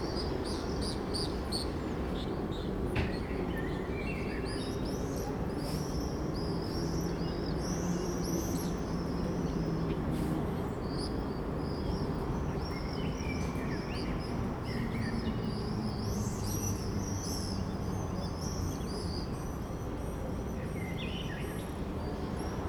Štítného, Olomouc, Česká republika - birds, summer evening
birds recorded at the balcony on a summer evening
Olomouc-Nová Ulice, Czech Republic